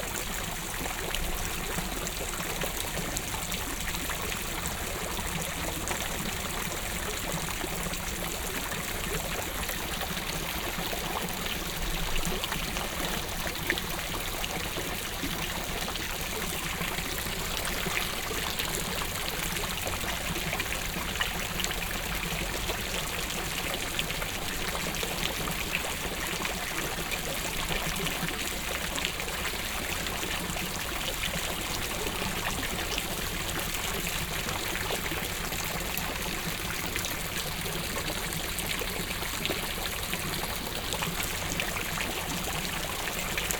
rudolstadt, market place, fountain
Standing on the market place at a historical fountain. The sound of the dripping and spraying water-
soundmap d - topographic field recordings and social ambiences
6 October, ~13:00